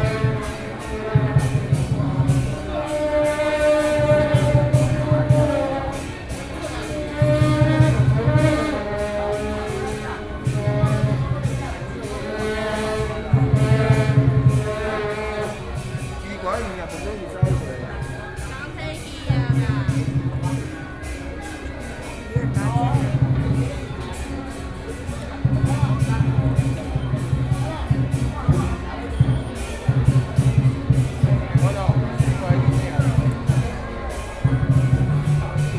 Taipei, Taiwan - Traditional temple festivals
Wanhua District, 貴陽街二段199號